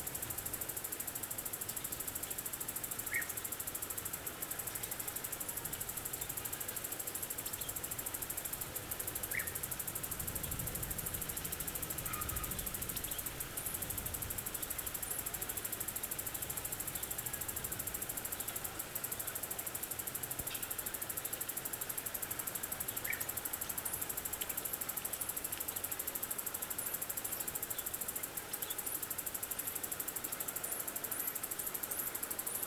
São Lourenço, Portugal - Arrábida by day
Arrábida during the day, cicadas, birds, distance traffic. DAT recording (DAP1) + MS setup (AKG C91/94)